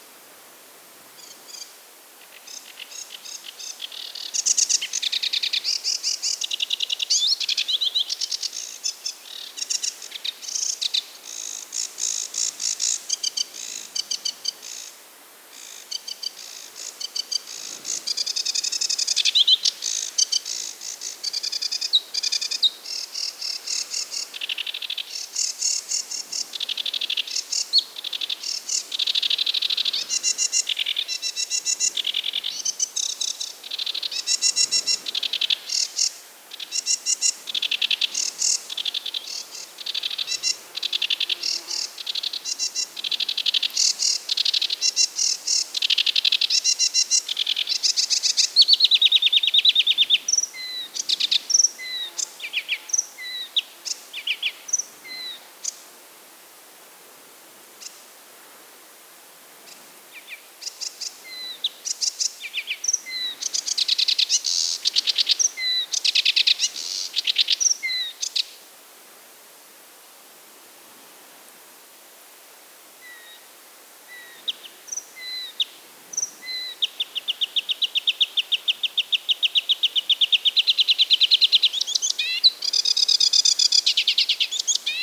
Birds in the tundra, White Sea, Russia - Birds in the tundra
Birds in the tundra.
Птицы в тундре